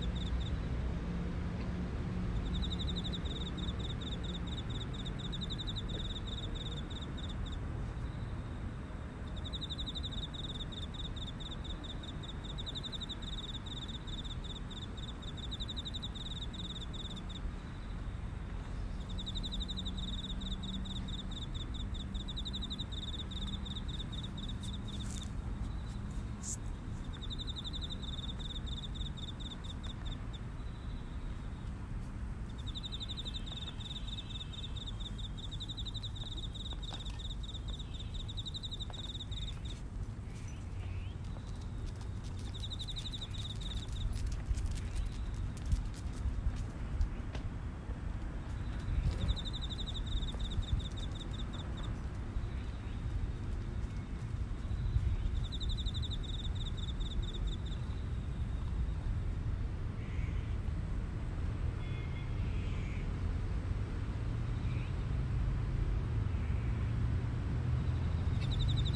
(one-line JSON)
{"title": "中国北京市海淀区树村路 - cicadas and birds", "date": "2020-09-20 13:06:00", "description": "sounds recorded from the park near my home in Beijing, China.", "latitude": "40.03", "longitude": "116.30", "altitude": "49", "timezone": "Asia/Shanghai"}